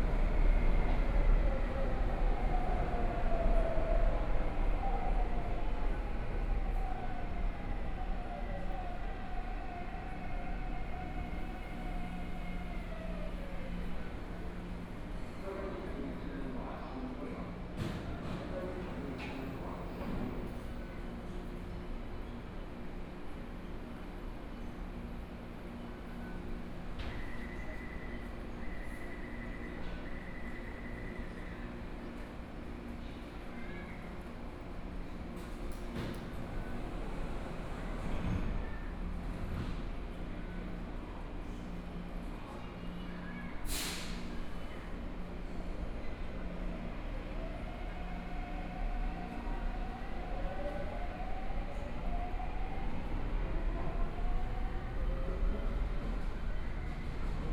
{
  "title": "Yuanshan Station, Zhongshan District - Walking in the station",
  "date": "2014-01-20 17:56:00",
  "description": "Walking in the station, Binaural recordings, Zoom H4n+ Soundman OKM II",
  "latitude": "25.07",
  "longitude": "121.52",
  "timezone": "Asia/Taipei"
}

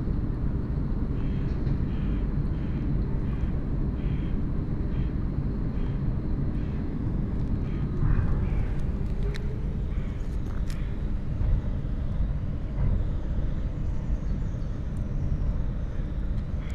{
  "title": "Berlin, Plänterwald, Spree - november dusk",
  "date": "2015-11-08 16:20:00",
  "latitude": "52.49",
  "longitude": "13.49",
  "altitude": "23",
  "timezone": "Europe/Berlin"
}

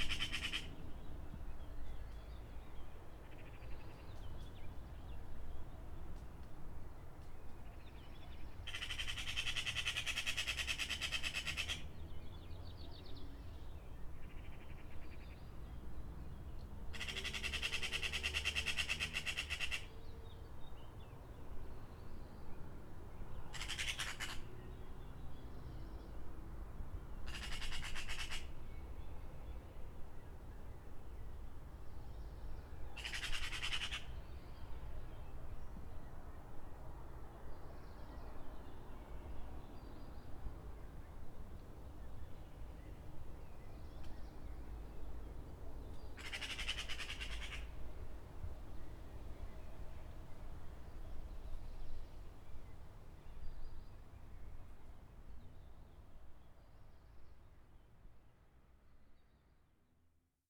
{
  "title": "Poznan, Piatkowo district, Magpie",
  "date": "2010-05-29 05:00:00",
  "description": "a frantic magpie jumping around in sbs garden and greenhouse. recorded early around 5 in the morning",
  "latitude": "52.46",
  "longitude": "16.93",
  "timezone": "Europe/Berlin"
}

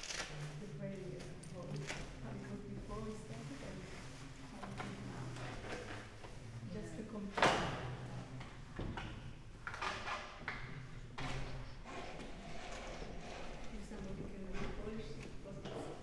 {
  "title": "Synagoge, Dzierżoniów, Polen - into the Synagogue",
  "date": "2018-10-21 11:35:00",
  "description": "on the way into the synagogue, we are passing a christian church service. As Rafael told us, the place is open to everybody.\n(Sony PCM D50)",
  "latitude": "50.73",
  "longitude": "16.65",
  "altitude": "272",
  "timezone": "Europe/Warsaw"
}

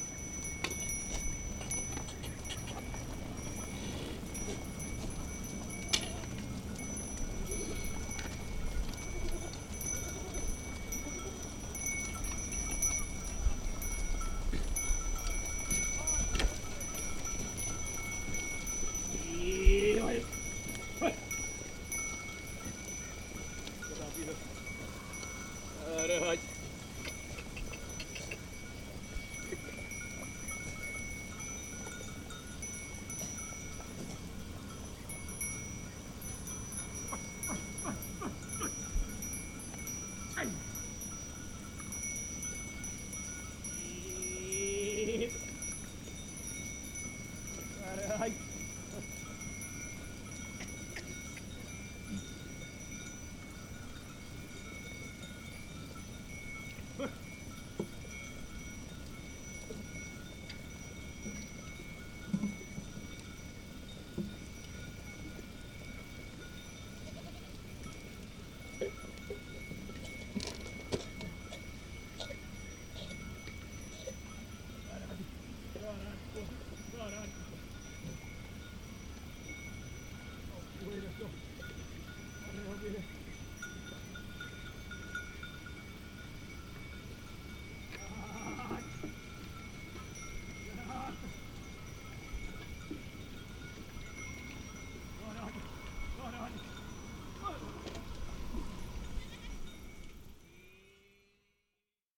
{
  "title": "Pocinho, praia fluvial, Portugal - Pastor de cabras, Pocinho",
  "date": "2010-07-12 18:00:00",
  "description": "Pastor e suas cabras ao longo do Douro. Pocinho Mapa Sonoro do Rio Douro. Sheppard and his goats along the Douro. Pocinho, Portugal. Douro River Sound Map",
  "latitude": "41.13",
  "longitude": "-7.12",
  "altitude": "114",
  "timezone": "Europe/Lisbon"
}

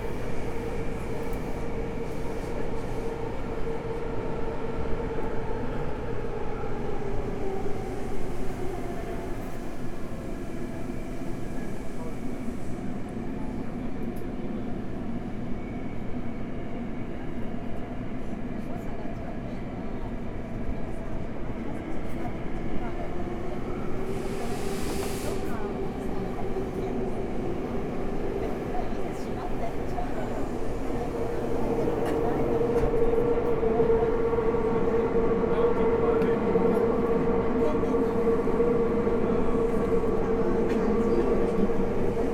{
  "title": "Chome Nishishinjuku, Shinjuku-ku, Tōkyō-to, Япония - Yamanote line",
  "date": "2016-08-02 12:29:00",
  "description": "Yamanote line Tokyo subway",
  "latitude": "35.69",
  "longitude": "139.70",
  "altitude": "52",
  "timezone": "Asia/Tokyo"
}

